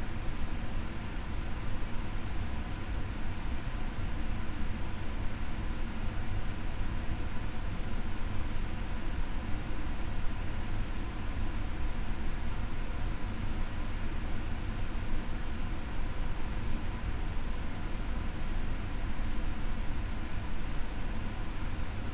Arboretum Ln, North York, ON, Canada - HVAC in the CTASC Vault
Recorded in the storage vault of the Clara Thomas Archives and Special Collections in the basement of the Scott Library at York University. The only sound is the air ventilation system.
Ontario, Canada